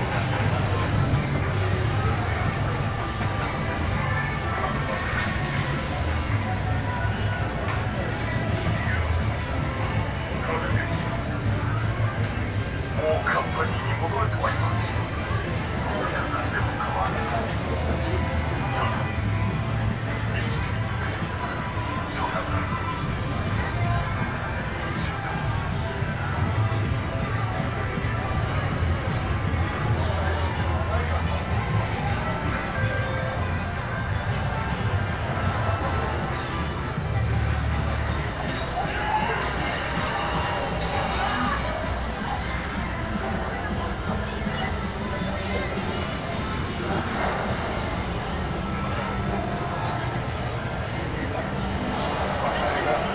inside a big store in the manga department movie and game automats sounds everywhere
international city scapes - social ambiences